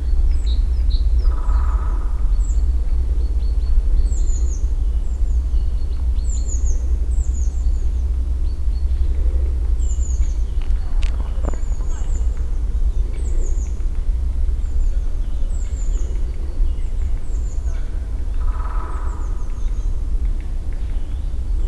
Morasko nature reserve, beaver pond - woodpeckers and others
(binaural) a warm, sunny day in the forest. even though it's february many birds are active. beak knocking of the woodpeckers spreads around the forest.
Poznań, Poland, 13 February 2015, 14:00